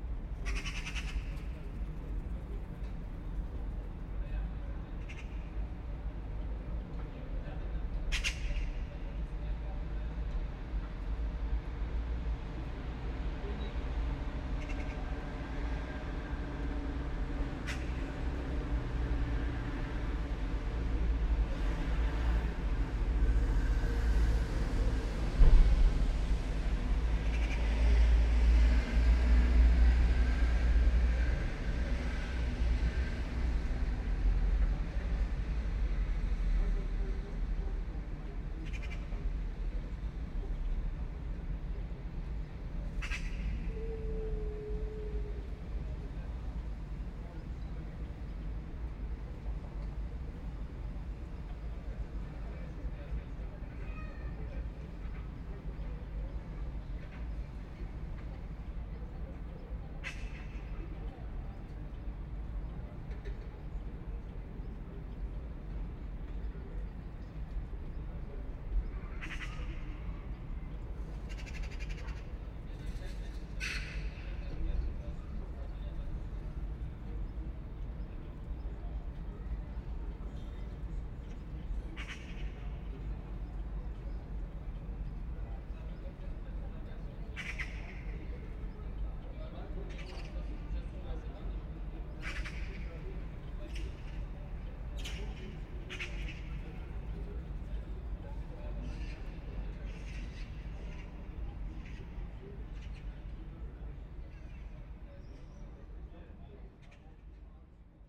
Thunder (twice) on neighbourhood, Kraków, Polska - (646 AB 18cm) KRAKOW, July stormy weather
Narrow (18cm) AB stereo recording.
Sennheiser MKH 8020, Sound Devices MixPre6 II